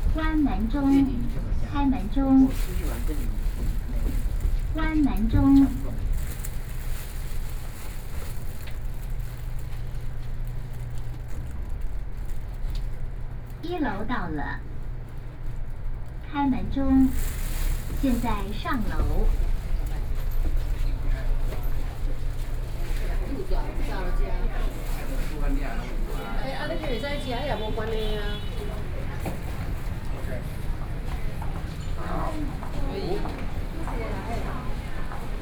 Ministry of Health and Welfare, Taipei - Elevator
in the Elevator, Sony PCM D50 + Soundman OKM II